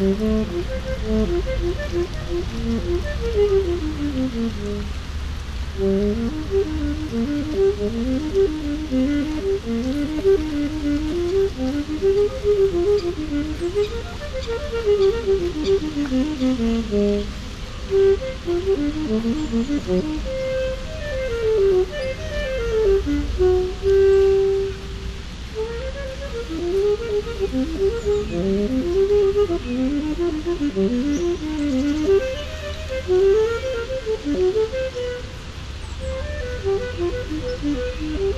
Saxophone player, The Hague
Saxophone player, Lange Vijverberg, The Hague, with traffic, pedestrians, and the Hogvijver fountain in the background. A little windy unfortunately. Binaural recording.